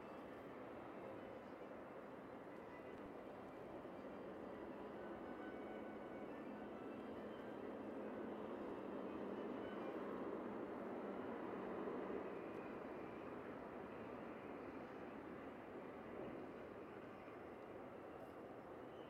North Dock, Dublin, Ireland - A bag pipe on my pocket
A bagpipe player makes a pleasant pad that spruce up the Samuel Beckett bridge's soundscape a couple of hours after Saint Patrick's parade.
Other points of this soundwalk can be found on
17 March 2014